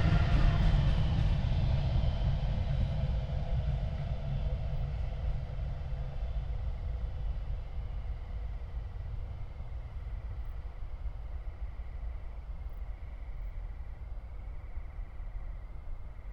tree crickets, lower pitched because temperature, a long freight train, among others.
(Tascam iXJ2 / iphone, Primo EM172)
Köln, Germany, 9 September, ~22:00